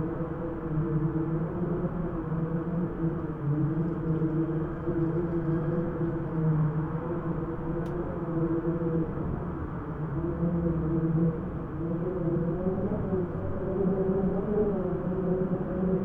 Neos Kosmos, Athens - wind in window
Interconti hotel, wind blowing through a window
(Sony PCM D50)
8 April, 18:40